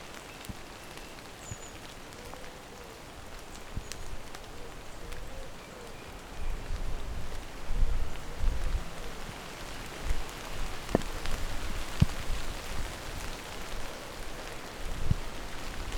Dartington, Devon, UK - soundcamp2015dartington rain and cars